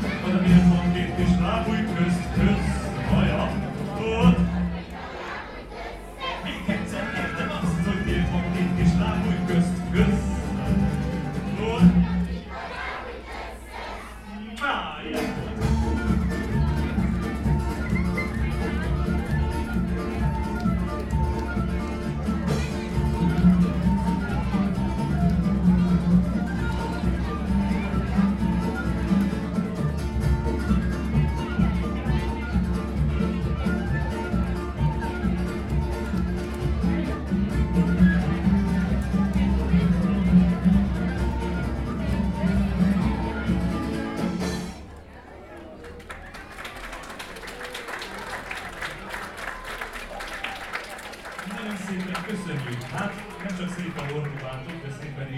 Classroom, Cluj-Napoca, Romania - (-196) Folk performance
Recording from a street-level window of a performance or rehearsal in a classroom at basement level.
Cluj, România, 31 May